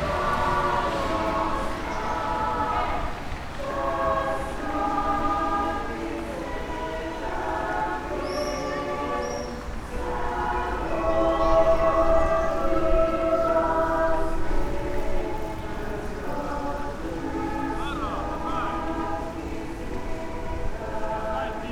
Prva gimnazija, Maribor, Slovenia - school choir, fountain, swallows, passers-by, cars
10 May, 11:15